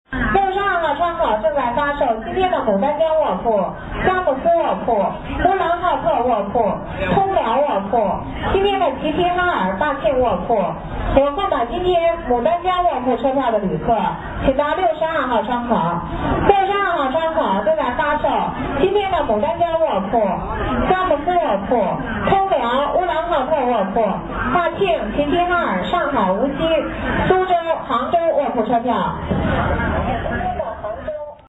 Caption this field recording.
chinese (travel information ?) announcement thru queeky speakers in the main hall of the station. international cityscapes - topographic field recordings and social ambiences